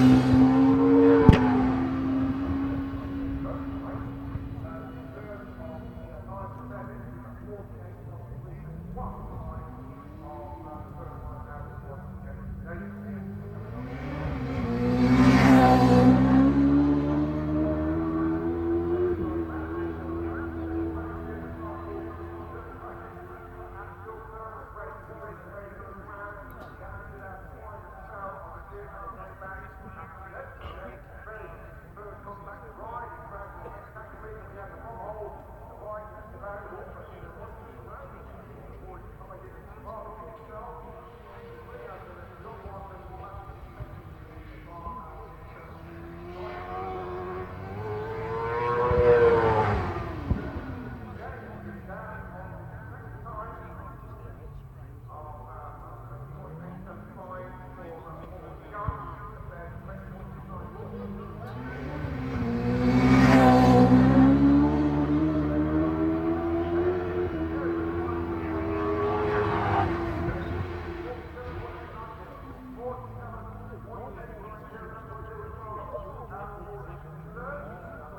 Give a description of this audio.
british superbikes 2002 ... superbikes superpole ... mallory park ... one point stereo mic to minidisk ... date correct ... time not ...